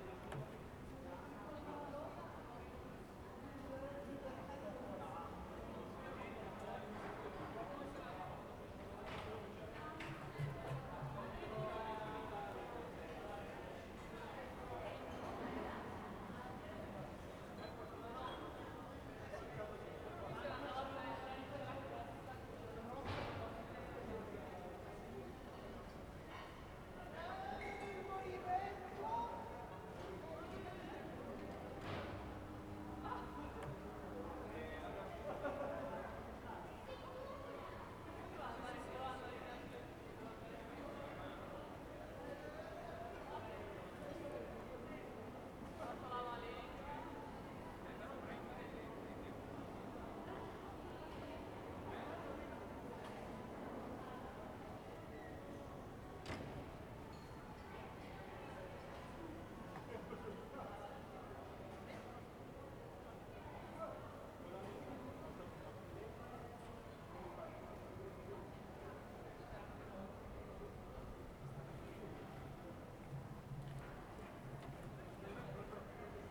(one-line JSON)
{"title": "Ascolto il tuo cuore, città. I listen to your heart, city. Several chapters **SCROLL DOWN FOR ALL RECORDINGS** - Terrace May 5th afternoon in the time of COVID19 Soundscape", "date": "2020-05-05 14:10:00", "description": "\"Terrace May 5th afternoon in the time of COVID19\" Soundscape\nChapter LXVII of Ascolto il tuo cuore, città. I listen to your heart, city\nTuesday May5th 2020. Fixed position on an internal terrace at San Salvario district Turin, fifty six days (but second day of Phase 2) of emergency disposition due to the epidemic of COVID19\nStart at 2:10 p.m. end at 2:57 p.m. duration of recording 47'17''", "latitude": "45.06", "longitude": "7.69", "altitude": "245", "timezone": "Europe/Rome"}